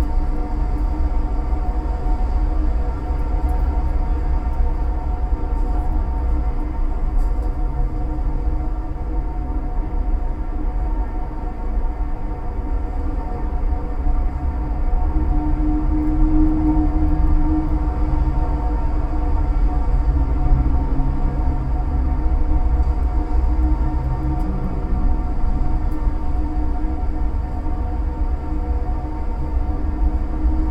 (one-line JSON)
{"title": "parking garage shopping cart rack", "description": "hollow tubular rack for storing shopping carts on the top storey of an empty parking garage, rathauspassage", "latitude": "52.52", "longitude": "13.41", "altitude": "47", "timezone": "Europe/Berlin"}